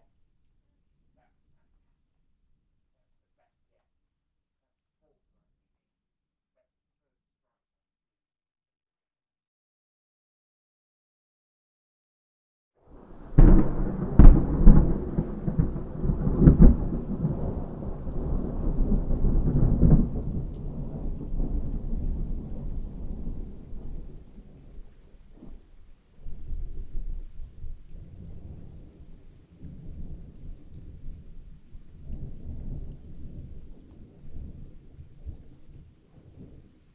Wedmore, Somerset, UK - Big Thunder Storm
My Daughter woke me at 2.00 am to tell me about an amazing thunder storm. I watched the most spectacular show for a full hour !! Here are the best bits, recorded on a 744 with a KFM6